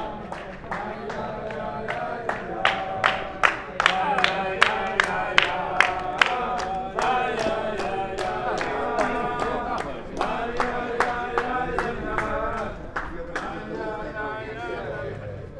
jerusalem - chant de chabbat dans les rues de Jerusalem